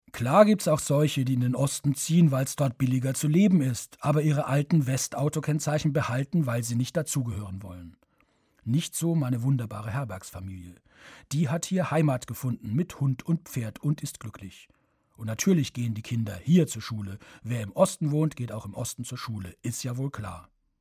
hanum - fremdenzimmer bei familie fock
Produktion: Deutschlandradio Kultur/Norddeutscher Rundfunk 2009
Hanum, Germany, 8 August 2009